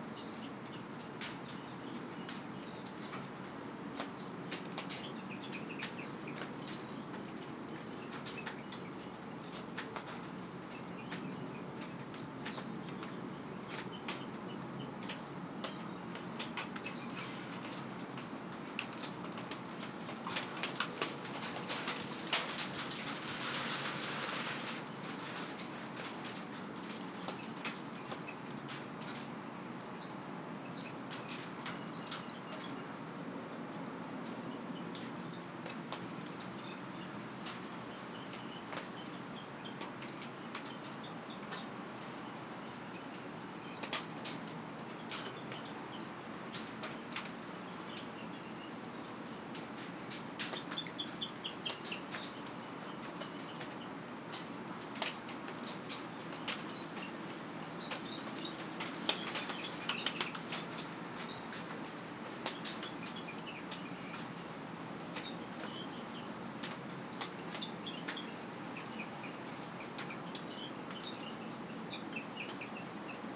Blackland, Austin, TX, USA - 4 AM Drizzle
Recorded with a pair of DPA 4060s and a Marantz PMD 661.